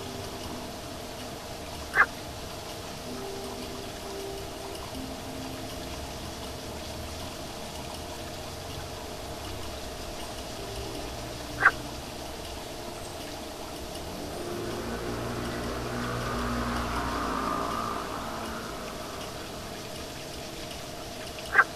Frog at the Comma Restaurant - Frog at the Comma Restaurant, XienDian Town
XienDian Town is in the south of Taipei City. This restaurant is away from the final station of MRT XienDian line.